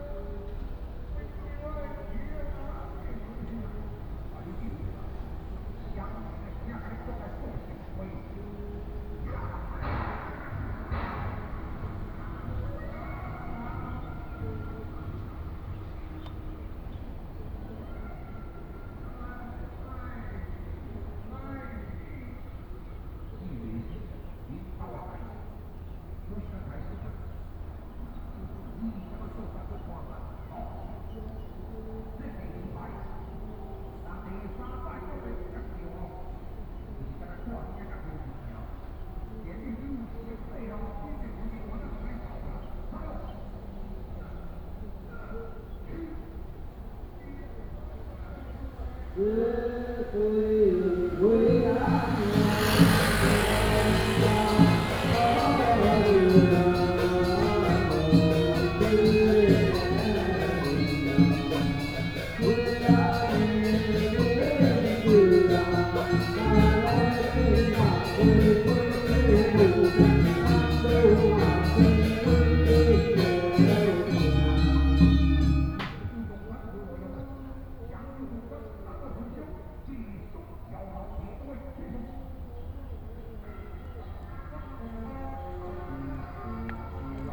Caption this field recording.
Dharma meeting, traffic sound, Binaural recordings, Sony PCM D100+ Soundman OKM II